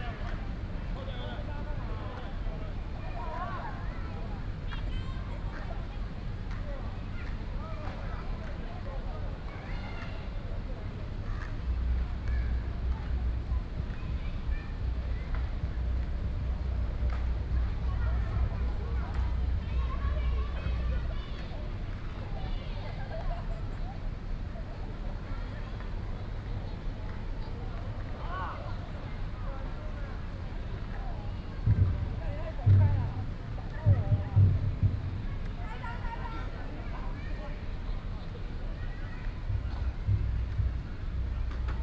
New Taipei City Hall, Banqiao District, Taiwan - In the Plaza
In the Plaza